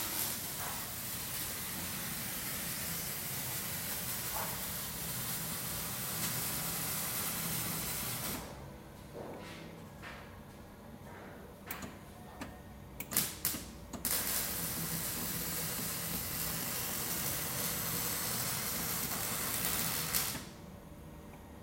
lippstadt, locksmithery/metalworking
recorded june 23rd, 2008.
project: "hasenbrot - a private sound diary"